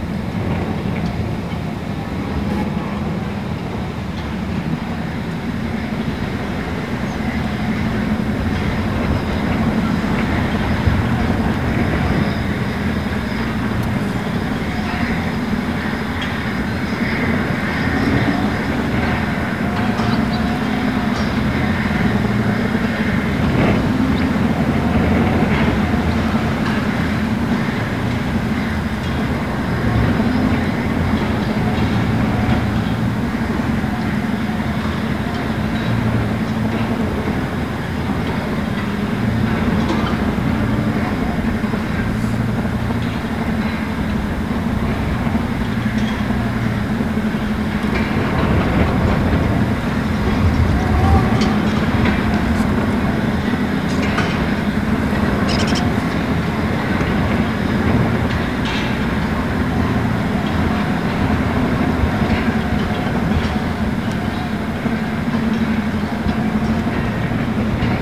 Tidy View Dairy Farm, Freedom, WI, USA - Windstorm at the largest dairy farm in Wisconsin
Over 8000 cows live inside the sheds here at the Tidy View Dairy farm, the largest of many industrial milk factories in Wisconsin, a state rich in protein. Windstorm rattled cages, ventilation turbines... upset the herd, startled birds... The stench of all that manure and fermenting silage is powerful enough miles away. Imagine what the cows inside these sheds have to put up with night and day. This is NOT a free range farm.